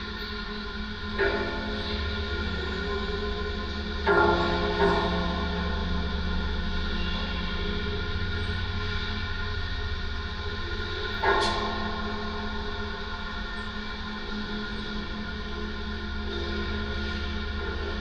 Dual contact microphone recording of a metal railing inside a large multi-storey parking lot of AKROPOLIS supermarket. Persistent traffic hum resonates through the railing, cars are going over bumps, and other sounds.